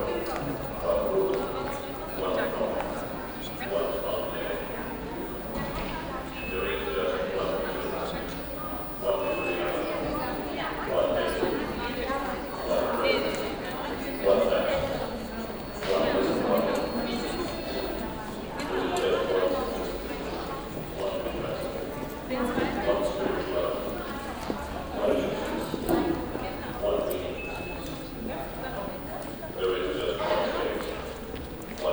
cologne, deutz, fair entrance hall, art cologne
Cologne, Germany